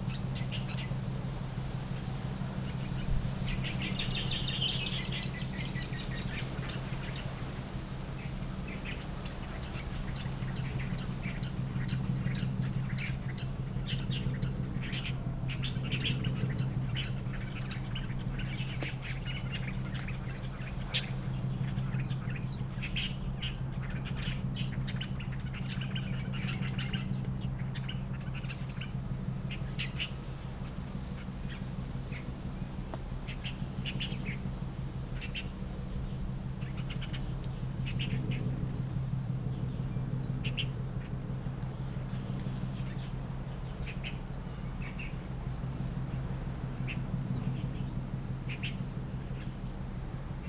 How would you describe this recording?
Abandoned village, Birds singing, Boats traveling, In the edge of the woods, Wind, Traffic Sound, Zoom H6 +Rode NT4